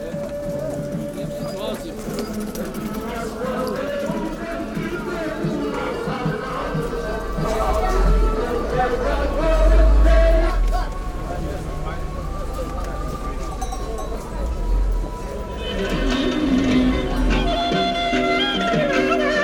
Skopje, Audiotape Sellers, rec 1999